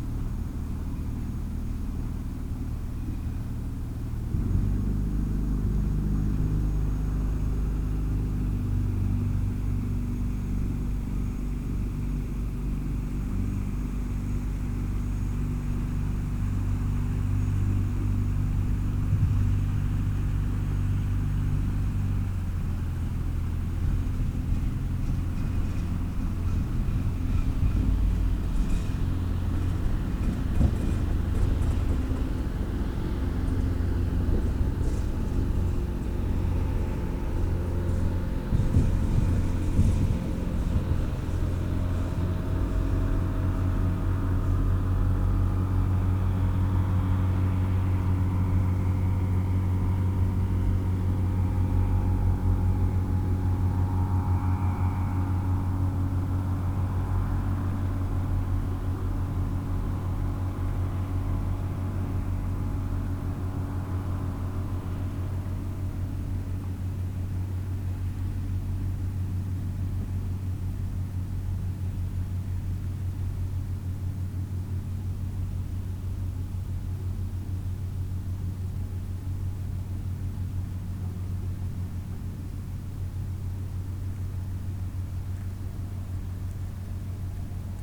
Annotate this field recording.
On the World Listening Day of 2012 - 18th july 2012. From a soundwalk in Sollefteå, Sweden. Boats in the river Ångermanälven in Sollefteå. WLD